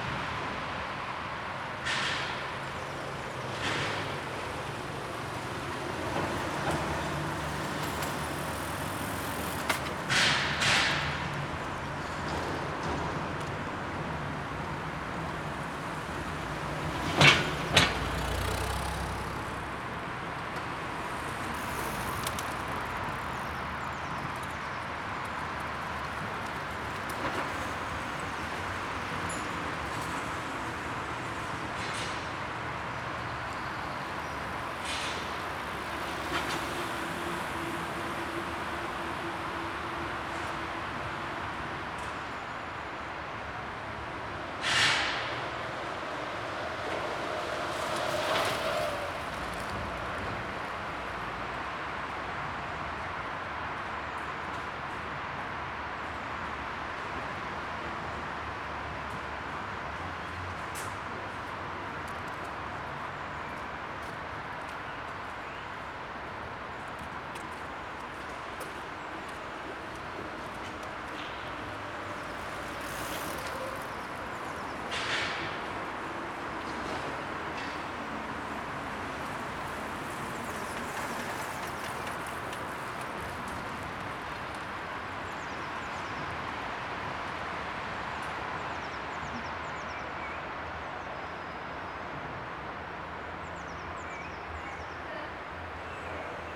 Poznan, Golencin district, Niestachowska expres way - underpass at Niestachowska
recorded under a flyover at Niestachowska street, one of the busiest express ways in Poznan. this underpass leads to Rusalka lake from the eastern parts of the city. plenty of people biking, walking and running towards the lake. continuous drone of the speeding cars above.
Poznan, Poland